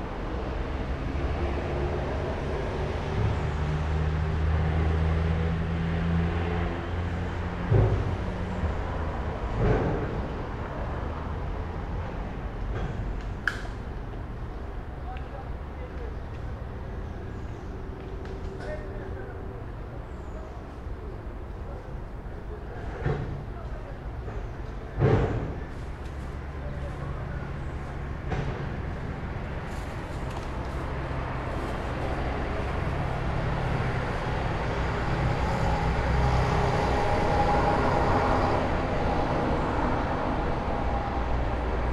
Moscow, Shipilovskiy pr. - Morning, Street Cleaning
Street cleaning, Street traffic